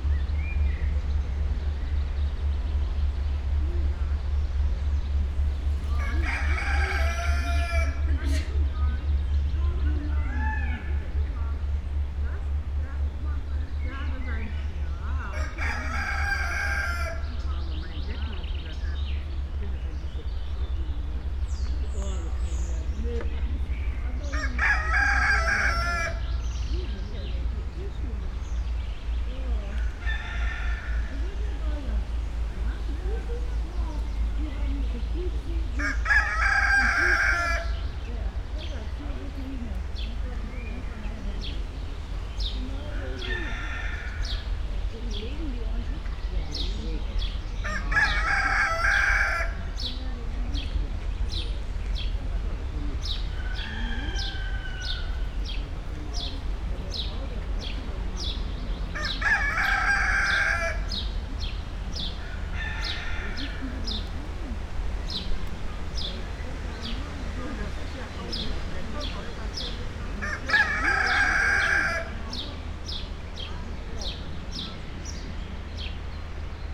Pfaueninselchaussee, Berlin, Germany - caged and free voices
cocks and peacocks, spoken words, steps, wind in tree crowns